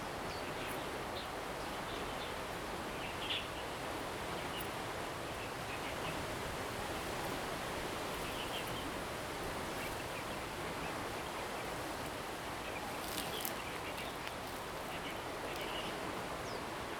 慈湖, Jinning Township - Birds and Stream
Stream flow sound, Birds singing
Zoom H2n MS+XY